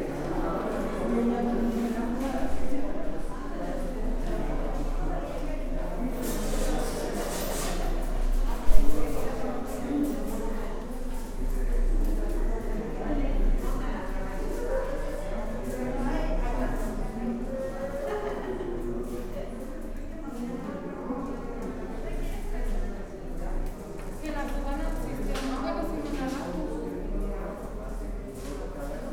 Benito Juárez, Centro, León, Gto., Mexico - En las oficinas del registro civil.
In the civil registry offices.
I made this recording on august 29th, 2022, at 2:25 p.m.
I used a Tascam DR-05X with its built-in microphones.
Original Recording:
Type: Stereo
Esta grabación la hice el 29 de agosto 2022 a las 14:25 horas.
Usé un Tascam DR-05X con sus micrófonos incorporados.